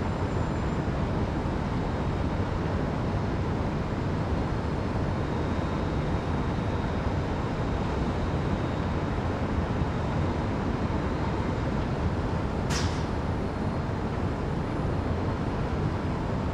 Kadaň, Czech Republic - Nástup mine - Grinding plant
Where the raw coal lumps are ground to a uniform 6cm size before being stored or transported by rail to the power station. We were told that much of the coal produced here is of high quality and is exported.